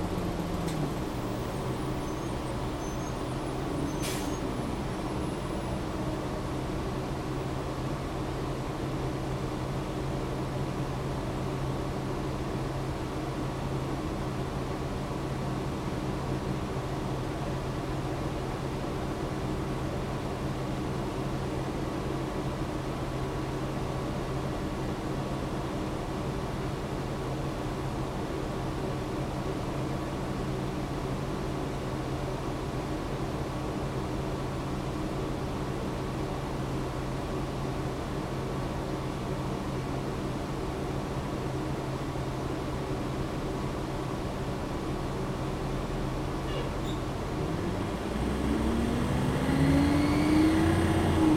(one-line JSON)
{"title": "Central LA, Los Angeles, Kalifornien, USA - bus trip in LA", "date": "2014-01-20 12:05:00", "description": "bus trip from west hollywood down north la cienega blvd, a/c in bus", "latitude": "34.08", "longitude": "-118.38", "timezone": "America/Los_Angeles"}